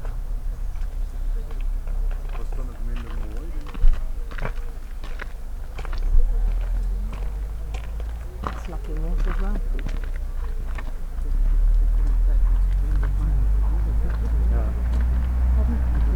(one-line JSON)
{"title": "Hidcote Manor Gardens, Chipping Campden, Gloucestershire, UK - Gardens", "date": "2018-07-11 15:56:00", "description": "The recorder is on the ground in a rucksack with the mics attached. It is close by a gravel path where many people pass. Beyond are ornamental gardens. Behind is a road to the car park.\nI have found sometimes when the surface is good placing the mics on the ground gives a semi boundary mic effect.\nMixPre 3 with 2 x Rode NT5s", "latitude": "52.09", "longitude": "-1.74", "altitude": "196", "timezone": "Europe/London"}